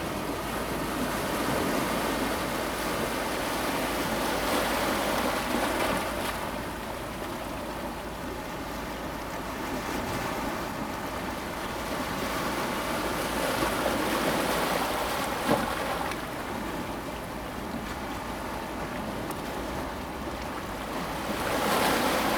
外木山濱海風景區, Keelung City - Rocky and the waves
sound of the waves, Rocky
Zoom H2n MS+XY +Sptial Audio